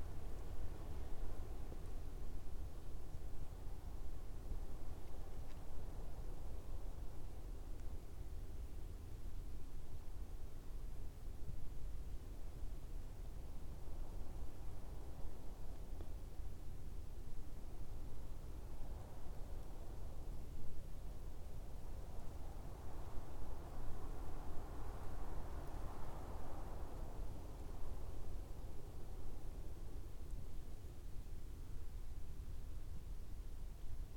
Rue de l'Arnière, Orgerus, France - winter mood
At that time the fields and trees are naked we are in an acoustic free field, the sound can be heard from far away.